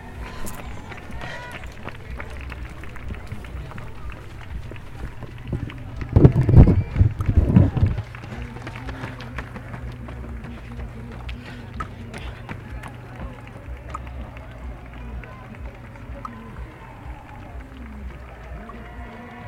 Bd Jean Charcot, Tresserve, France - Arrivée course
Près du lac à 500m de l'arrivée de la course à pied des 10km du lac organisée par l'ASA Aix-les-bains les belles foulées des premiers concurrents, certains sont plus ou moins épuisés par la distance, polyrythmie des groupes, les clapotis de l'eau se mêlent aux applaudissements du public.